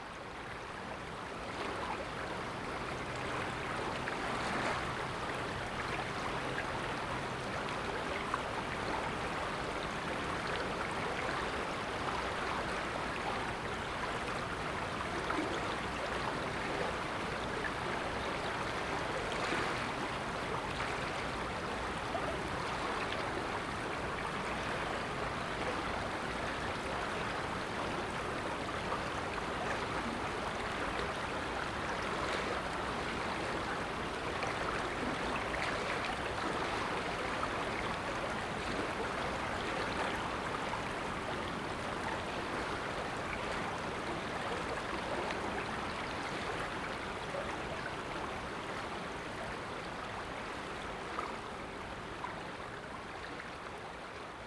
A binaural recording.
Headphones recommended for best listening experience.
A personally "defined" 400 Meter space of the Ilm river revealing its diverse tones, forms and gestures. The night peripheral ambience is relatively calm so there is less masking of the space.
Recording technology: Soundman OKM, Zoom F4.
Landkreis Weimarer Land, Thüringen, Deutschland, 20 April 2021